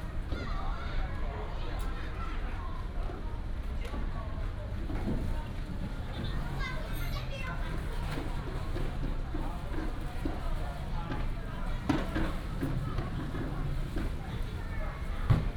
家樂福內壢店, Zhongli Dist., Taoyuan City - At the entrance to the hypermarket
At the entrance to the hypermarket, Traffic sound, Footsteps